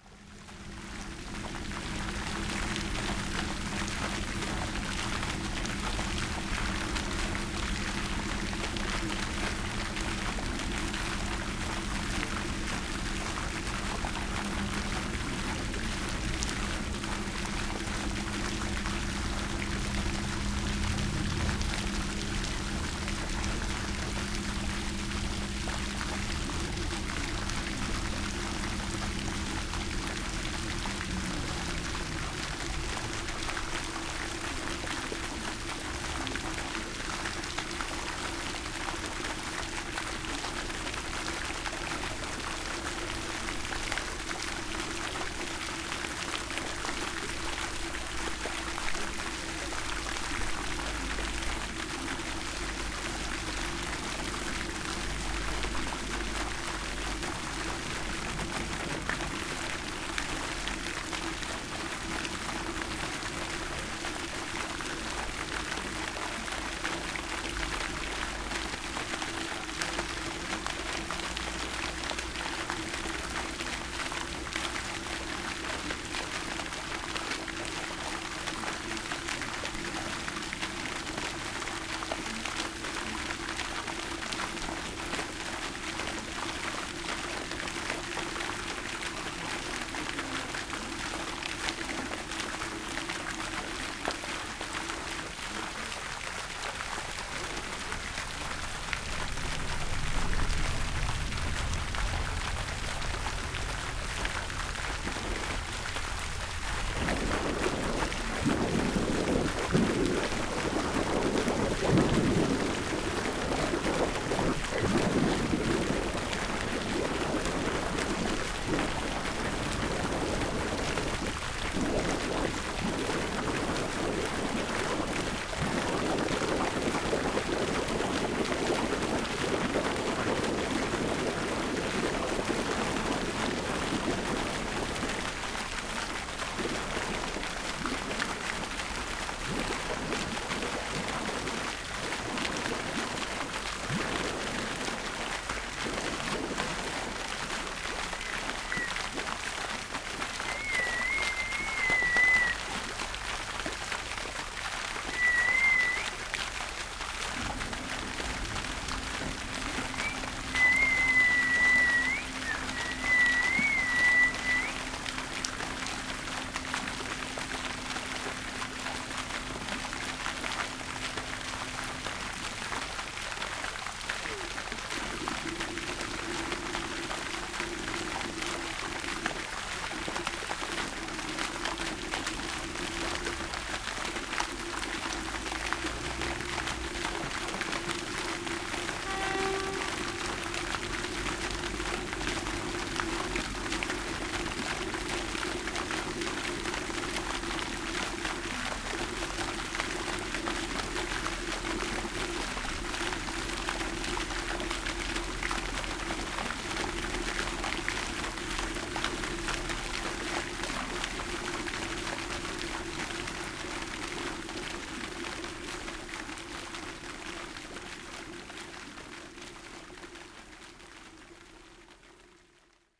niederfinow, lieper schleuse: fountain - the city, the country & me: fountain in front of boat lift
fountain with gurgling overflow drain
the city, the country & me: may 10, 2014